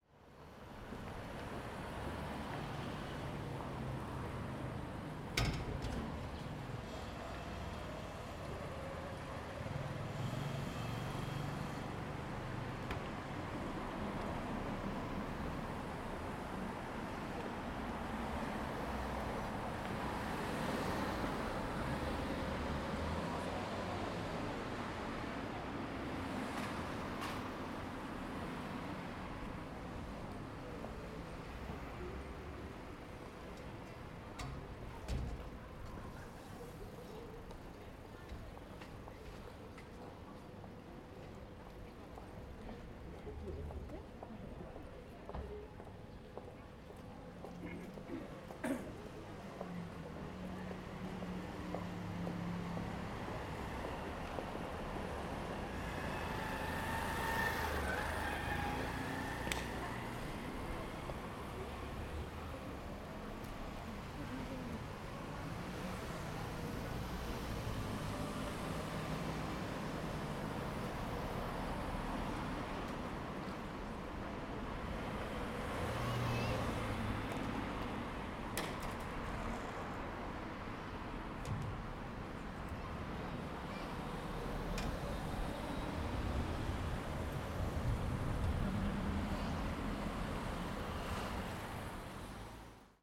Hase, Kamakura-shi, Kanagawa-ken, Japonia - Kamakura Crossing
Street crossing in front of the Hesadera in Kamakura.